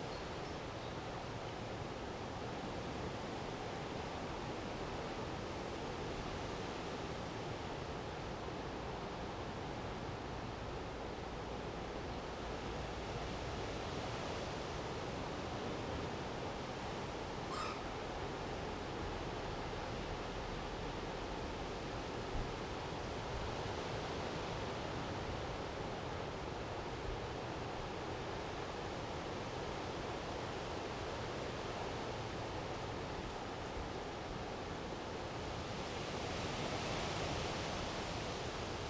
stormy late afternoon, wind blows through trees
the city, the country & me: june 13, 2015
Workum, Netherlands, 13 June, ~18:00